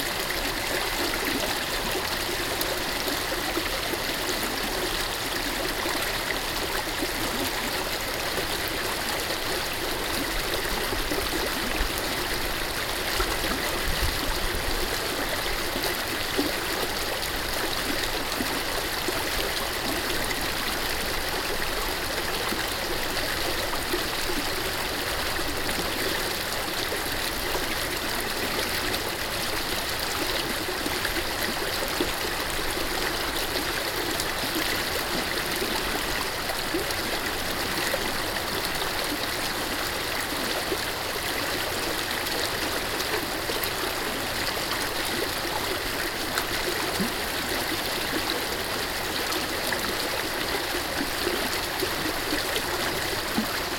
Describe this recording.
Binaural recording of one of the many irrigation canals you can find in the Andes valley of the river Aynín in Perú.